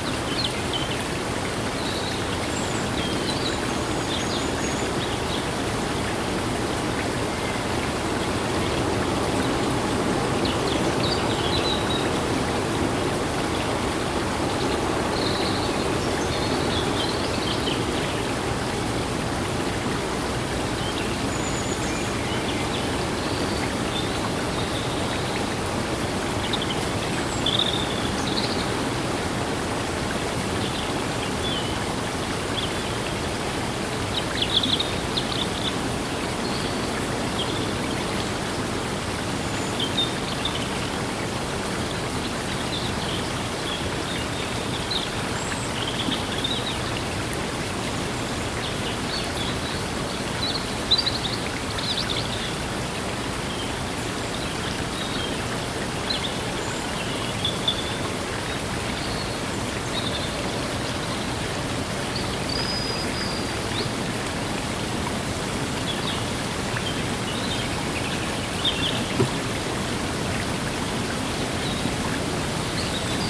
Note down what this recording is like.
Ho usato uno Zoom H2n con il filtro antivento nuovo di pacca.